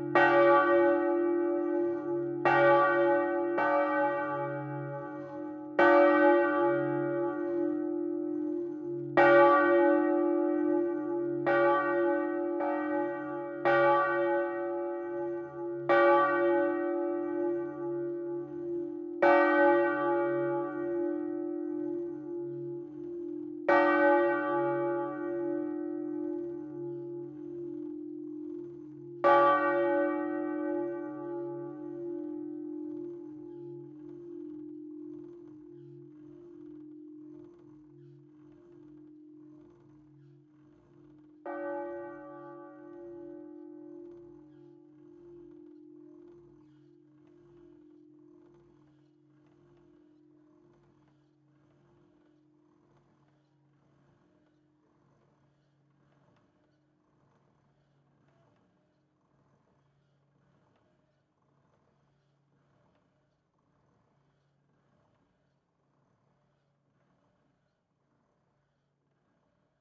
Rue du Doyen, Fruges, France - clocher de l'église de Fruges
Fruges (Pas-de-Calais)
Cloche de l'église - volée
France métropolitaine, France, 11 March